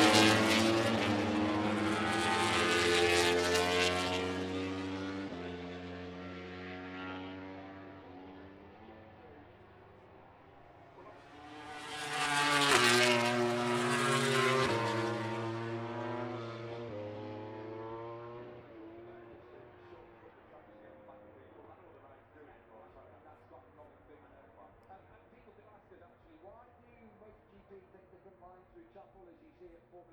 Silverstone Circuit, Towcester, UK - british motorcycle grand prix 2022 ... moto grand prix ......
british motorcycle grand prix 2022 ... moto grand prix qualifying one ... zoom h4n pro integral mics ... on mini tripod ...